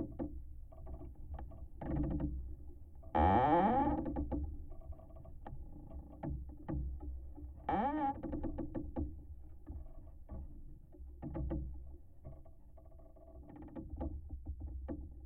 Vyzuonos, Lithuania, moaning tree
tree in a wind recorded with contact microphones
27 July 2017, 18:40